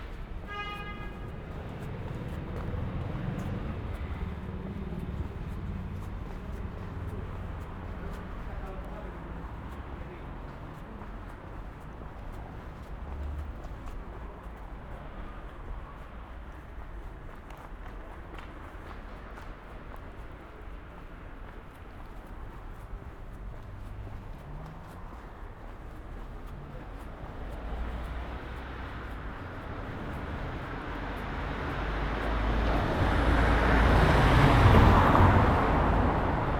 Ascolto il tuo cuore, città, I listen to your heart, city. - “Outdoor market on Saturday in the square at the time of covid19”: Soundwalk
“Outdoor market on Saturday in the square at the time of covid19”: Soundwalk
Chapter CXLIII of Ascolto il tuo cuore, città. I listen to your heart, city.
Saturday, November21th 2020. Walking in the outdoor market at Piazza Madama Cristina, district of San Salvario, two weeks of new restrictive disposition due to the epidemic of COVID19.
Start at 3:12 p.m., end at h. 3:42 p.m. duration of recording 30:19”
The entire path is associated with a synchronized GPS track recorded in the (kml, gpx, kmz) files downloadable here: